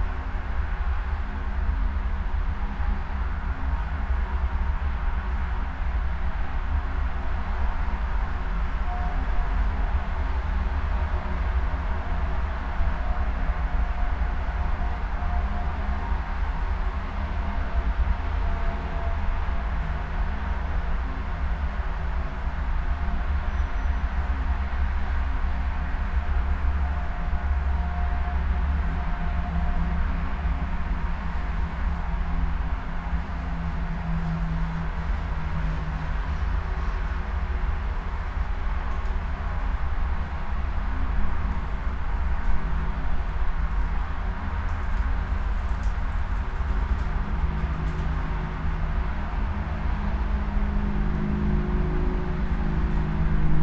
Tempelhofer Park, Berlin, Deutschland - Autobahn in dustbin
Tempelhof former airport area, info point under contruction, near motorway A100, traffic noise heard in a dustbin
(SD702, DPA4060)
Germany, 25 October 2014